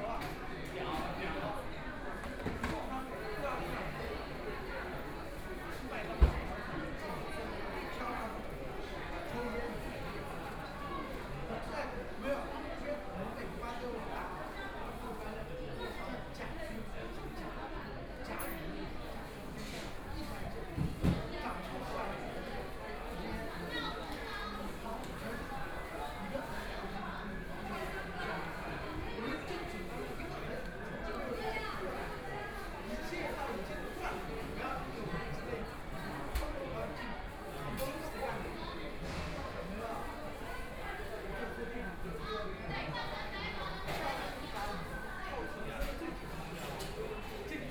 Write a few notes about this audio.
In the underground mall, Fast-food restaurants(KFC), Binaural recording, Zoom H6+ Soundman OKM II